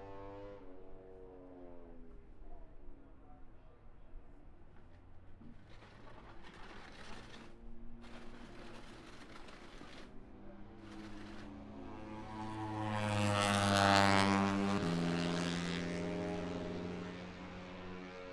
british motorcycle grand prix 2022 ... moto three free practice one ... zoom h4n pro integral mics ... on mini tripod ...